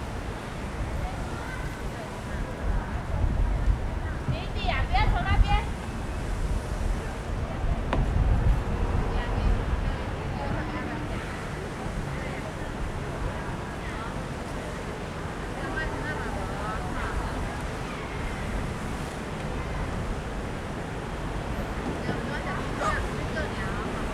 Gushan District, Kaohsiung - Children
Children playing games in the park, Sony ECM-MS907, Sony Hi-MD MZ-RH1